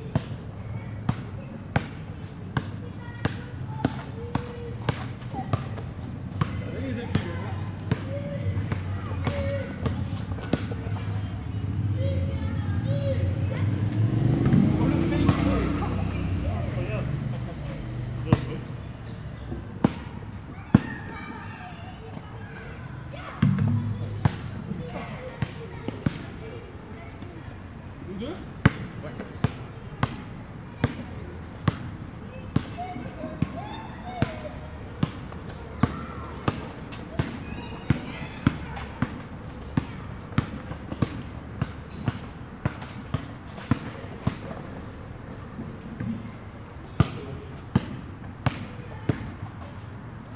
Rue des Amidonniers, Toulouse, France - Two guys play basketball
park, children playing, birds singing, crows
Two guys play basketball
Captation : Zoom H4N